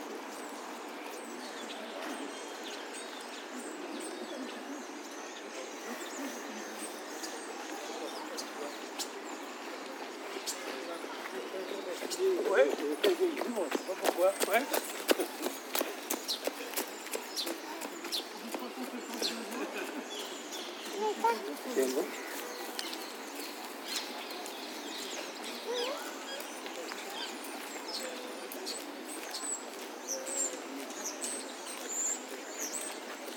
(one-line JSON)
{"title": "Avenue Michal, Paris, France - Walk in Buttes-Chaumont from the main gate to the lake", "date": "2021-01-22 11:34:00", "description": "Walk-in, by winter day, Buttes-Chaumont parc from the main gate to the lake, I took several ambiances in front of the main gate and into the parc: Traffic outside of the parc, the chirp of the bird in the woods, and the screech of the children, snatches of jogger's conversations and stroller's", "latitude": "48.88", "longitude": "2.38", "altitude": "71", "timezone": "Europe/Paris"}